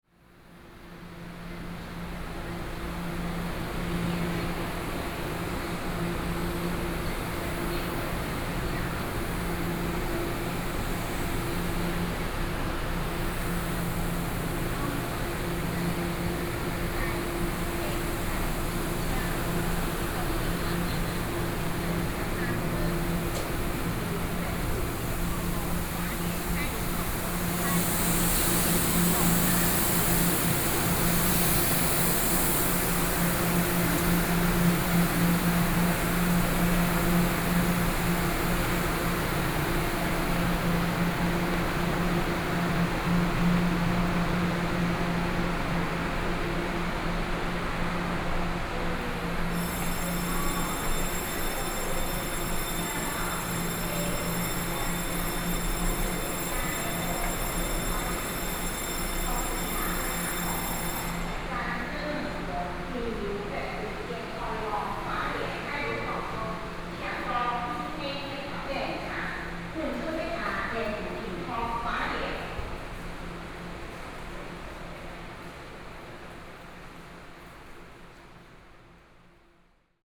Luodong Station, Taiwan - walking out of the station
Station broadcast messages, walking out of the station, Zoom H4n+ Soundman OKM II
7 November, 08:39